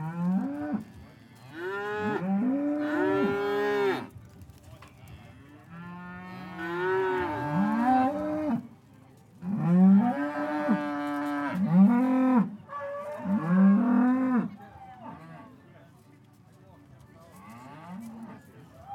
Voe & District Agricultural Show, Voe, Shetland Islands, UK - Cows at the Voe Show
This is the sound of cattle (especially prize-winning bulls) in their pens at the Voe & District Agricultural Show in Shetland. All of the bulls you can hear are from the local area, and many of them have rosettes. I have a feeling - if my memory is right - that the noisiest of the bulls was actually a wee Shetland bull. The Shetland cattle are comparatively small in stature, but make up for this I reckon in noise. Recorded with Naiant X-X microphones and FOSTEX FR-2LE.